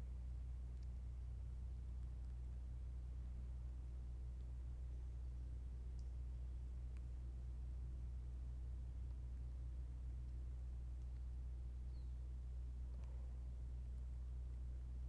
Berlin Wall of Sound, former road to checkpoint Drewitz 120909